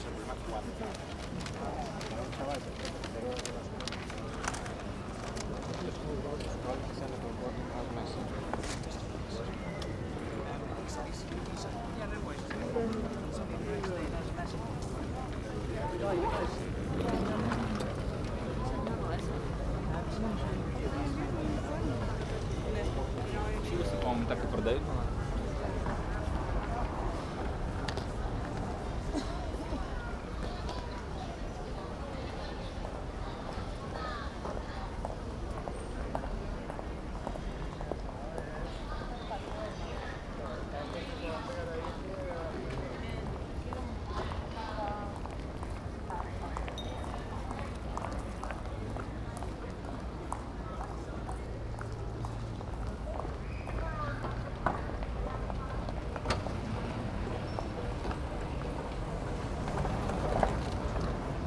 Barcelona Airport (BCN), El Prat de Llobregat, Provinz Barcelona, Spanien - airport atmosphere: people near transport band and speakers
TASCAM DR-100mkII with internal Mics
El Prat de Llobregat, Barcelona, Spain, 21 April